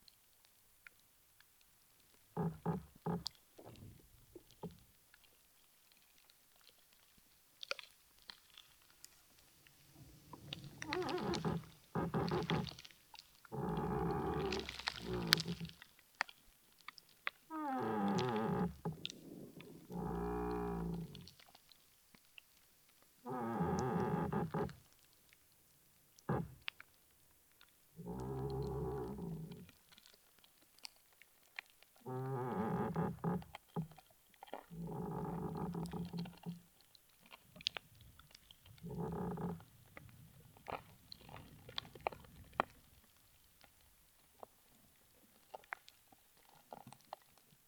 Lithuania, Utena, moaning tree and ants
contact microphones put into earth near the pine tree...